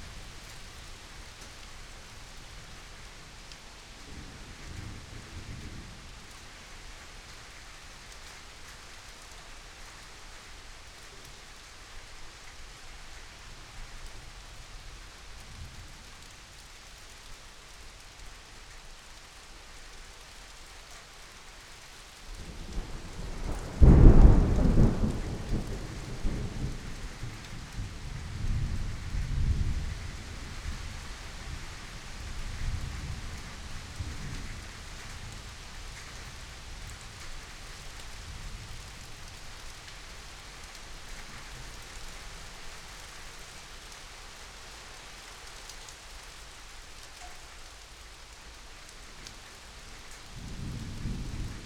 Recorded with Zoom H6 earworm 3 microphone and dummyhead, use headphones